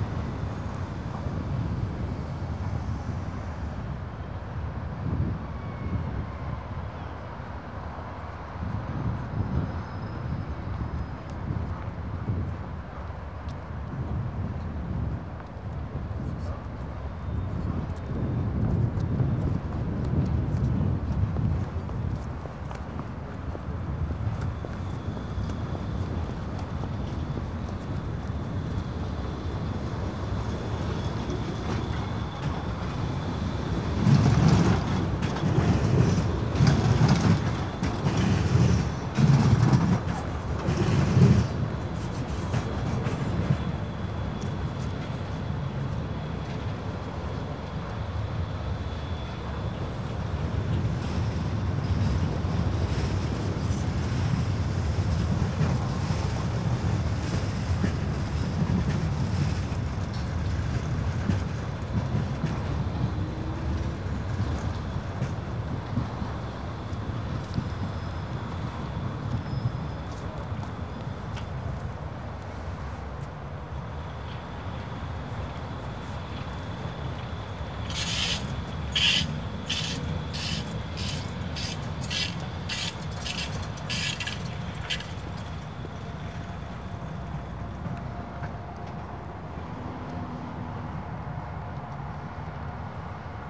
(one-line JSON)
{"title": "Poznan, Piatkowo, Sobieskiego tram loop - trams on the loop", "date": "2012-09-20 12:33:00", "description": "trams creaking on the loop, passers-by.", "latitude": "52.46", "longitude": "16.92", "altitude": "91", "timezone": "Europe/Warsaw"}